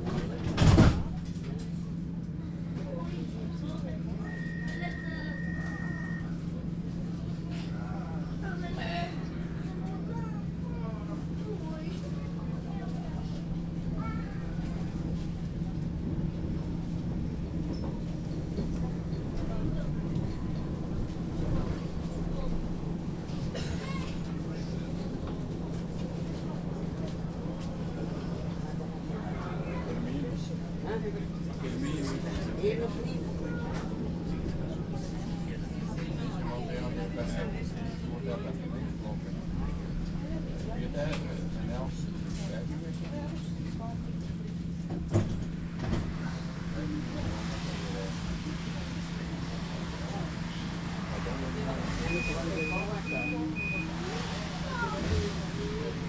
driving with tram 15 from the city centre to suburb
Antwerpen, Belgium